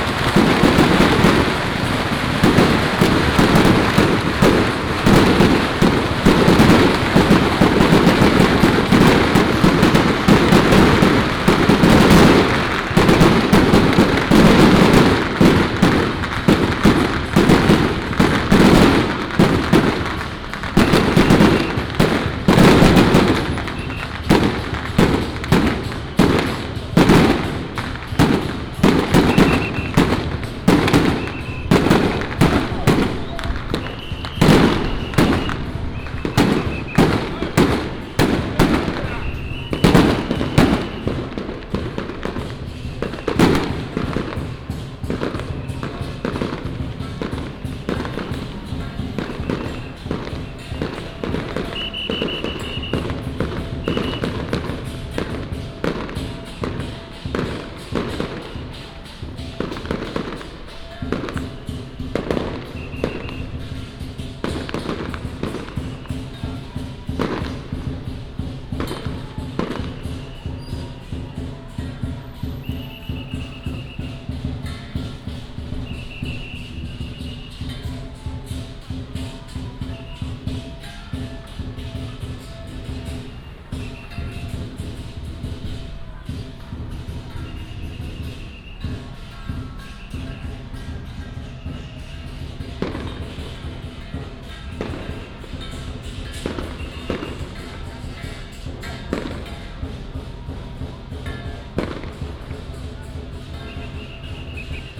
Sec., Linsen Rd., 虎尾鎮 - Fireworks and firecrackers
Fireworks and firecrackers, Traffic sound, Baishatun Matsu Pilgrimage Procession
Yunlin County, Taiwan, 3 March, 14:47